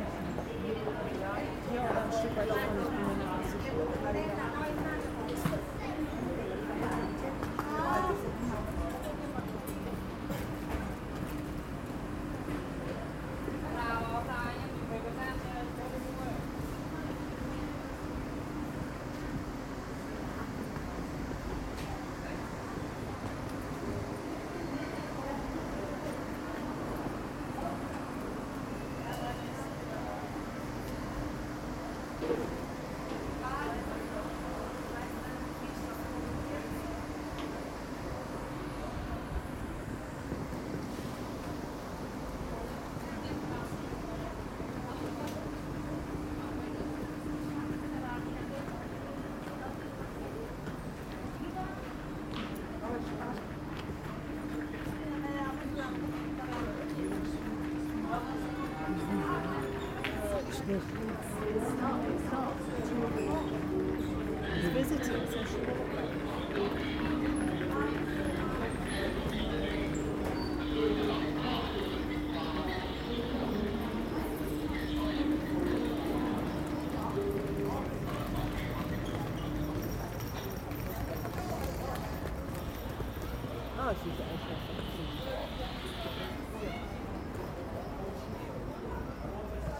mannheim, main station, railroad traffic
recorded june 28th, 2008, around 10 p. m.
project: "hasenbrot - a private sound diary"